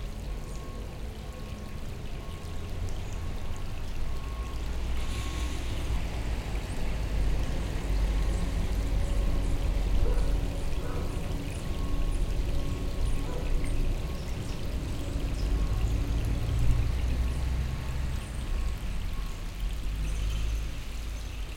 Chamesson, France - Bells ringing in a small village
In this small village of the Burgundy area, we are in a very old wash-house, renovated by township. Nearby the Seine river, we are waiting the rains stops, it makes a inconspicuous music on the Seine water, absolutely dull like a lake here. At 12 a.m., the bell is ringing time and angelus. It's a lovely ambiance.
31 July, 12:00